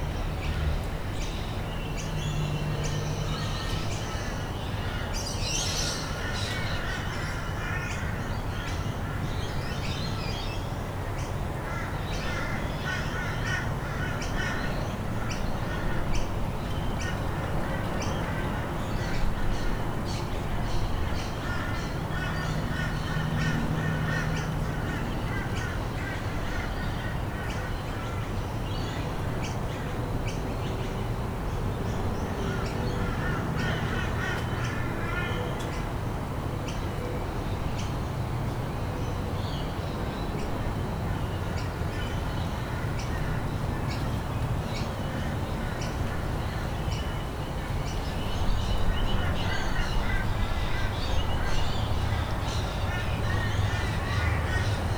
Currumbin QLD, Australia - Morning sounds in the garden
This was recorded in my garden in the morning in March. It was a warm, slightly overcast & windy morning. Birds, kids walking to school, traffic, wind chimes and a moth flying passed the microphone at 1:42 and 1:48. Recorded on a Zoom H4N.
27 March 2015, 08:45